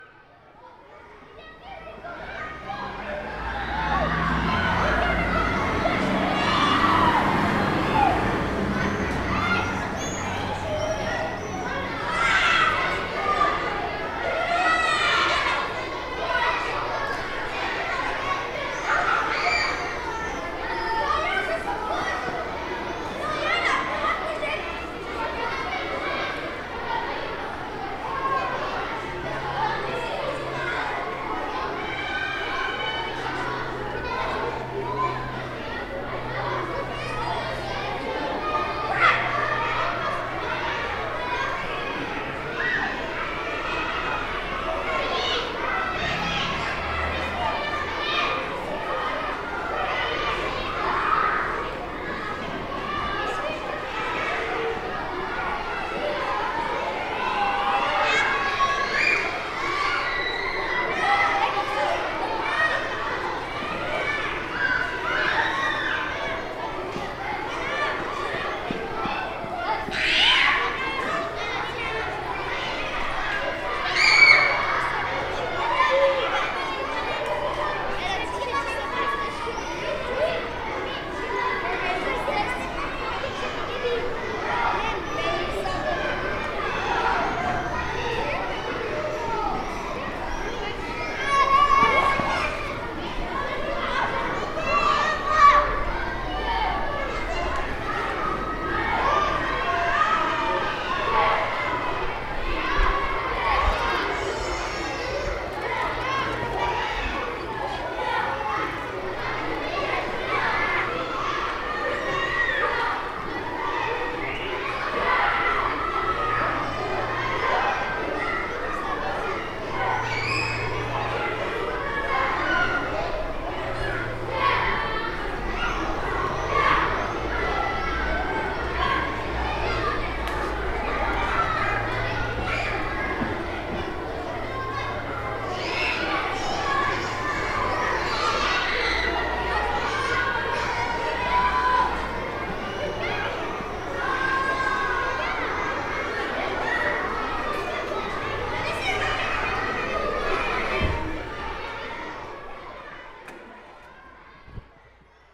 Pl. des Écoles, Aix-les-Bains, France - C'est la récrée!
La cour de récréation de l'école du Centre en pleine effervescence, bientôt les vacances.